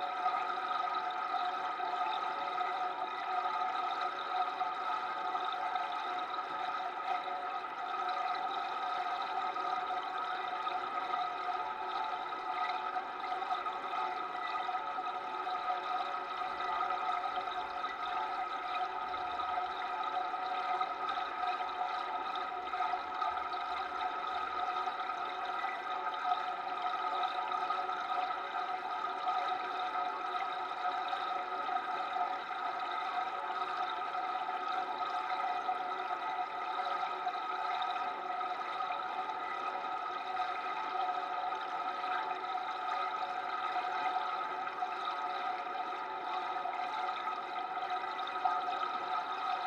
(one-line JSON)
{"title": "Berlin Buch, former trickle fields / Rieselfelder - water station, overflow", "date": "2021-10-02 14:34:00", "description": "Berlin Buch, former trickle fields / Rieselfelder, where for more than a century the waste water of the city was spread out into the landscape. During the last 20 year, water management and renaturation of formerly straightened ditches has been established, in order to keep water available to the vegetation in the area, feed swamps and moores and clean the still polluted water. Contact Mic recording of the water flow.\n(Sony PCM D50, DIY contact mics)", "latitude": "52.67", "longitude": "13.47", "altitude": "57", "timezone": "Europe/Berlin"}